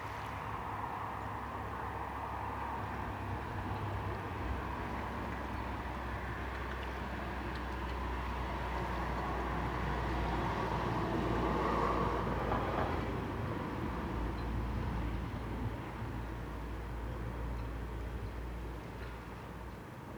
Millport, Isle of Cumbrae, Scotland - midnight water's edge
water lapping, mast rigging and seals on the island just across from the bay
North Ayrshire, UK, 23 May 2010